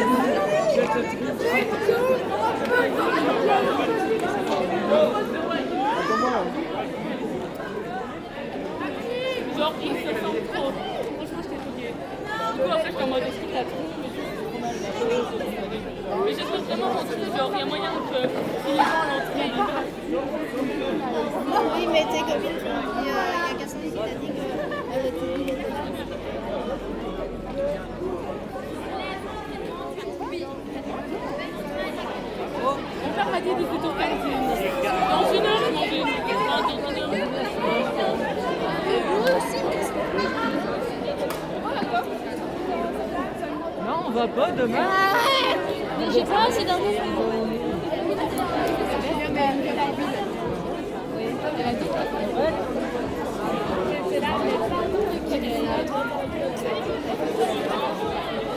Namur, Belgique - Crowded bars
On the Vegetable-Market Square and gradually walking on the Chanoine-Descamps square. The bars are crowded. They welcome a student population who is already drunk. Many tables are overloaded with the meter, a term we use to describe a meter of beer glasses in a rack. Many students practice the "affond", it's a student tradition which consists of drinking a complete beer as quickly as possible. Then, the glass bottle is shattered on the ground or the plastic crushed with the foot. The atmosphere is crazy and festive, it screams everywhere.